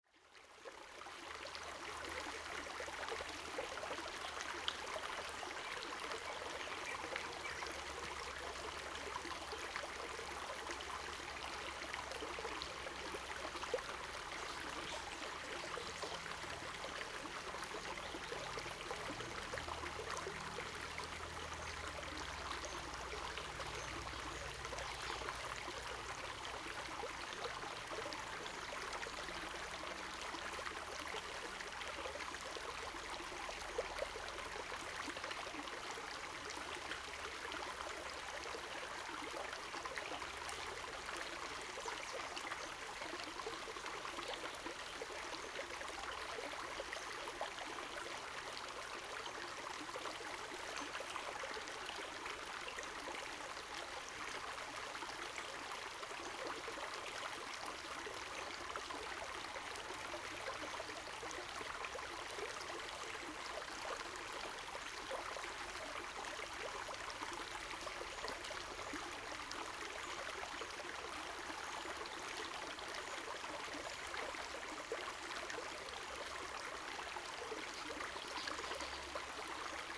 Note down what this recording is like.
Bird calls, Crowing sounds, The sound of water streams, Zoom H2n MS+XY